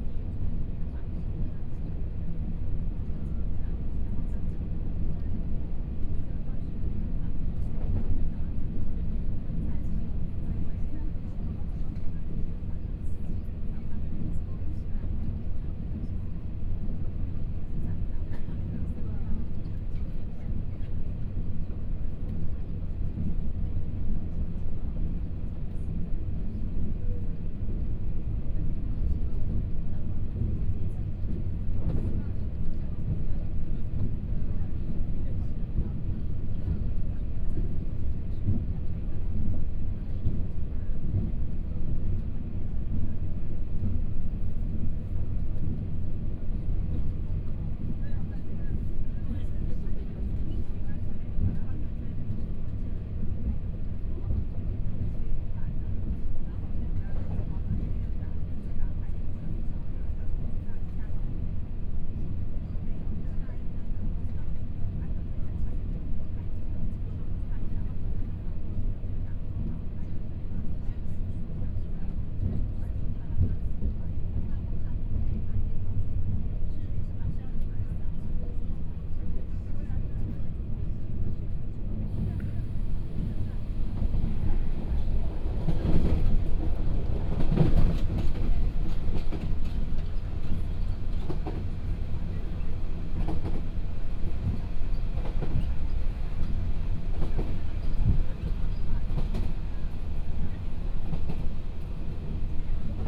Luye Township, Taitung County - Taroko Express
Interior of the train, from Shanli Station to Ruiyuan Station, Binaural recordings, Zoom H4n+ Soundman OKM II
Luye Township, Taitung County, Taiwan, 18 January 2014